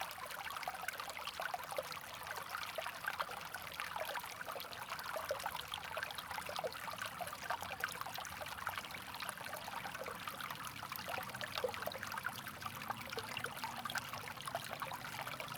乾溪, 埔里鎮成功里 - River scarce flow
Stream, River scarce flow
Zoom H2n MS+XY
Nantou County, Taiwan, 26 April 2016, 12:40